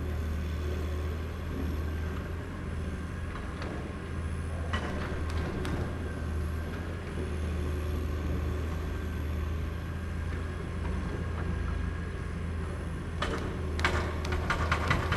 berlin: liberda-/manitiusstraße - the city, the country & me: demolition of a supermarket
excavator with mounted jackhammer demolishes the foundation of a supermarket
the city, the country & me: february 3, 2012
Berlin, Germany, February 2012